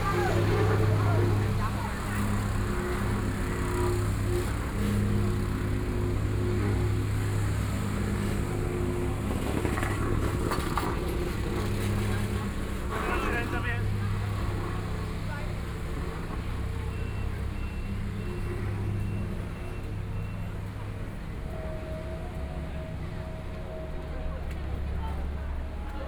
Minsheng E. Rd., Zhongshan Dist. - Soundwalk
Walking on the road, Walking through the streets, To MRT station, Various shops voices, Motorcycle sound, Traffic Sound, Binaural recordings, Zoom H4n+ Soundman OKM II
Taipei City, Taiwan, 15 February 2014, ~19:00